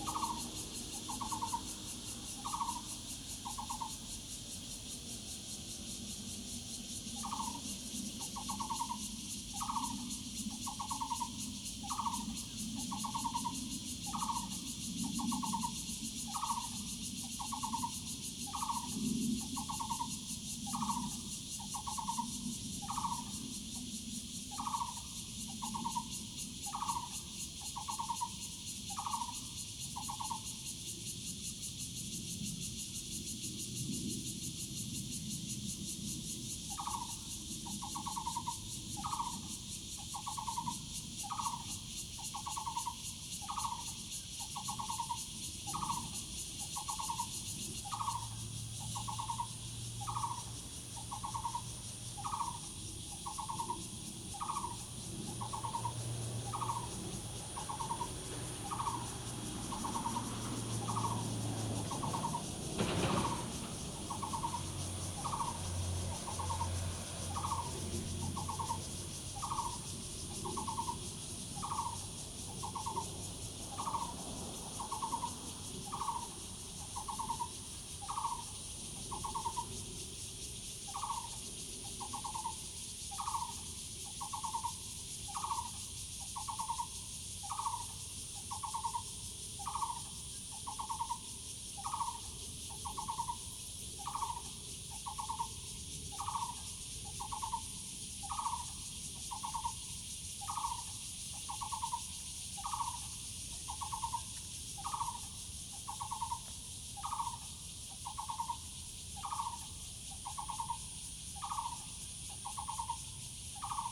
獅頭坪大橋, Emei Township - Birds and cicadas
Birds and cicadas, traffic sound, Zoom H2n MS+XY